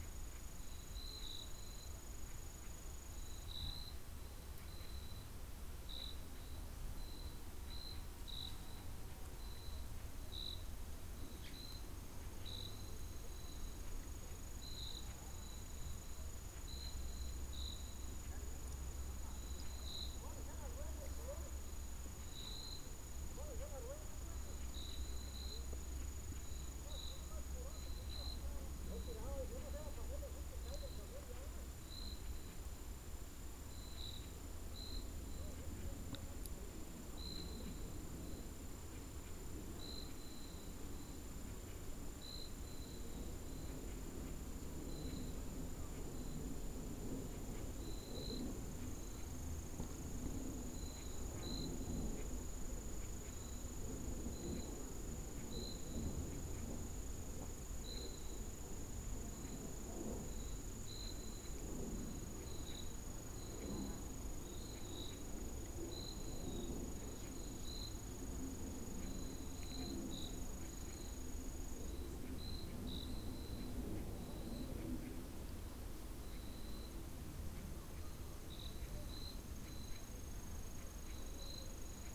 La Angostura, Guanajuato, Mexico - Ambiente en La Angostura.
Atmosphere in La Angostura.
A little inhabited place.
I made this recording on march 13th, 2022, at 7:02 p.m.
I used a Tascam DR-05X with its built-in microphones and a Tascam WS-11 windshield.
Original Recording:
Type: Stereo
Un lugar poco habitado.
Esta grabación la hice el 13 de marzo de 2022 a las 19:02 horas.
Guanajuato, México, 12 March 2022